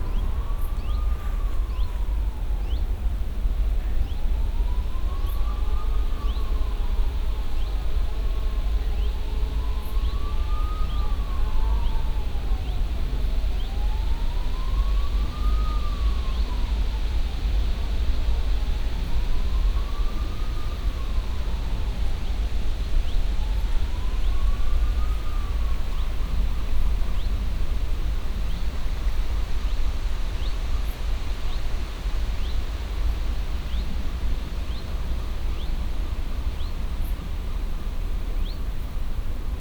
(binaural recording) recorded at the river bank of Warta river. calm, quiet summer day. hum of the trees. birds circling over the water. chainsaw works on the other side of the river. ambulance horn pulsing through the whole recording. (roland r-07 + luhd PM-01bins)